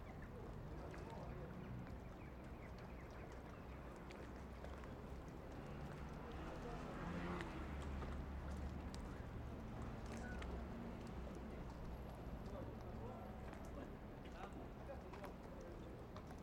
Endoume, Marseille, France - Vallon des Auffes
Harbor at twilight with fishermen birds and boats. ORTF with Oktava Mics.
By Jérome NOIROT & Clément Lemariey - SATIS Dpt University of Provence